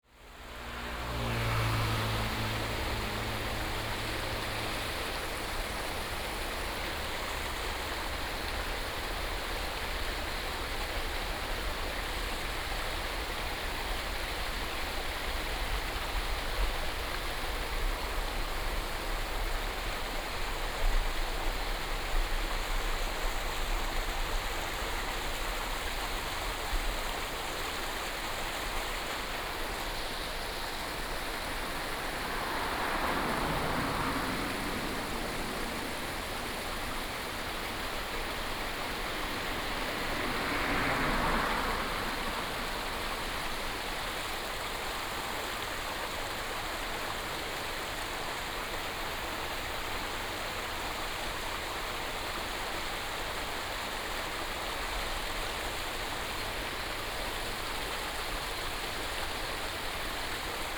大鶯路, Daxi Dist., Taoyuan City - stream
stream, Cicada and bird sound, Traffic sound
Taoyuan City, Taiwan, 8 August 2017